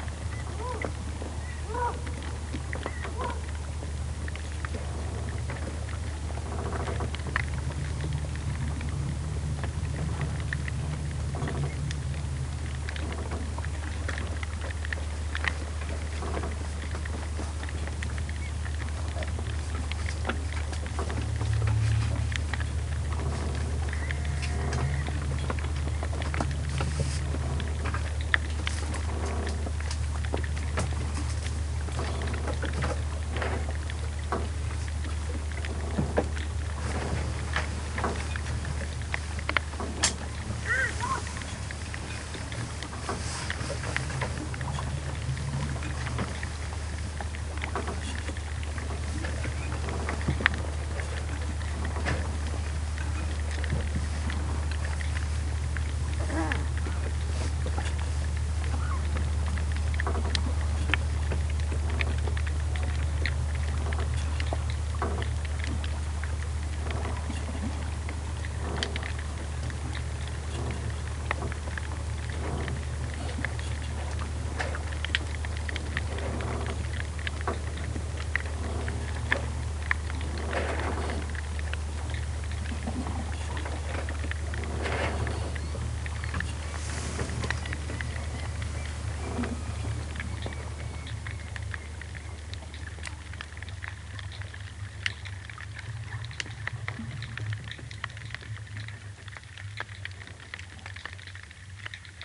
Muck, Small Isles, Scotland - Above, Submerged and Within Muck Bay (aerial & hydrophone)
3-channel recording with a Sound Devices MixPre-3, a mono Aquarian Audio h2a hydrophone and a stereo pair of DPA 4060s